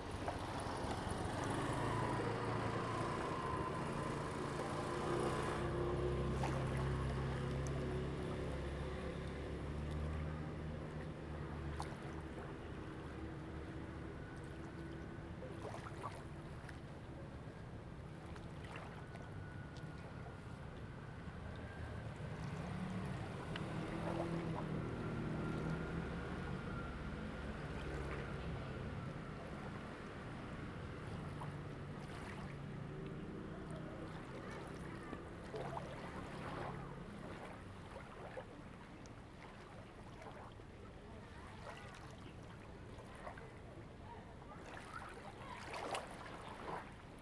Waves and tides
Zoom H2n MS +XY